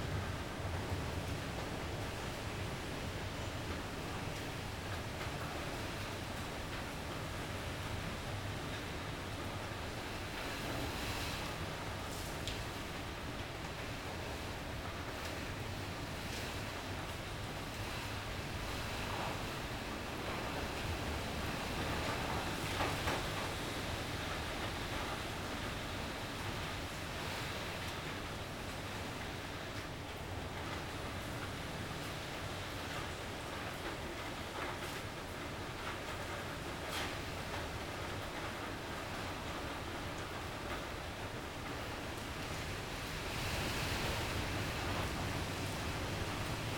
Higashiwakamiyachō, Kamigyō-ku, Kyōto-shi, Kyōto-fu, Japonia - bite of typhoon
recorded during heavy storm on a hotel balcony. building across the street is a parking lot. There are a lot of metal sheets and wires. Wind bending the sheets and roofing of the parking lot as well as swooshing in the wires. (roland r-07)
Kyōto-shi, Kyōto-fu, Japan, September 2018